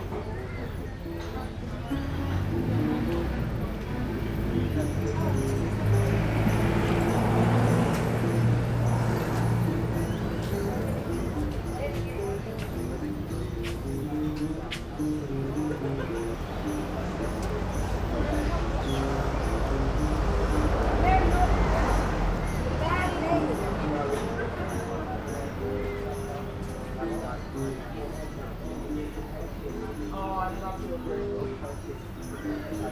Nimbin is a small village on the east coast of Australia that is famous for its alternative hippy lifestyle and Mardigrass Festival. In this clip a singer sings out of tune with a guitar that is equally out of tune. Nevertheless his enthusiasm and style says a lot about the town.
Nimbin NSW, Australia, 31 May 2011